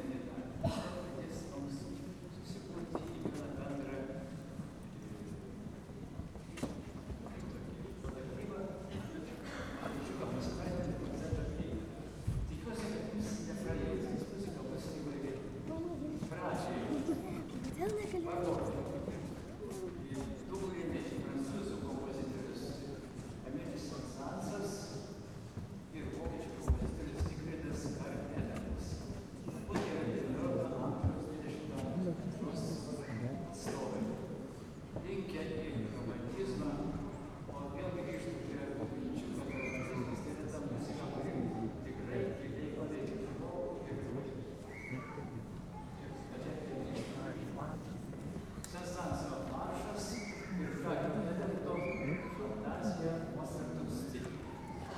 Lithuania, Leliunai, beginning of organ music concert in church
Lietuva, European Union